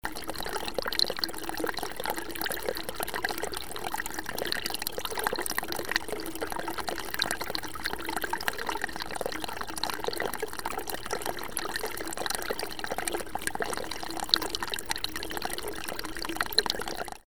Rijecina river, Rijeka, Ruhr Mill
Rijecina river in a Summer time. location: ex industrial mill complex Ruhr (19. century.)
3 June 2009, 15:00